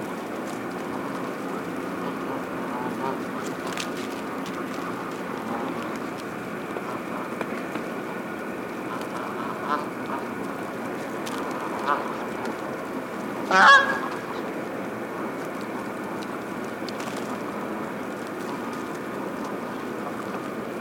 Roy H. Park School of Communications, Ithaca, NY, USA - Geese on ice
Geese walking on ice whilst pecking the ground for food. Constant HVAC drone is audible, along with occasional goose honks, wing flapping, truck sounds and human voices.
Recorded with a Sennheiser ME 66
Tompkins County, New York, United States, February 2021